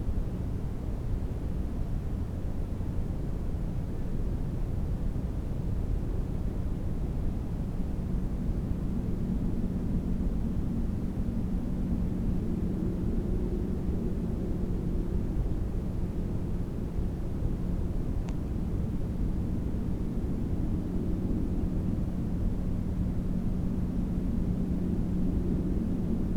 I put the mikes into empty foxhome on the mound. Sounds are: wind outside and tractor in the distance
Lithuania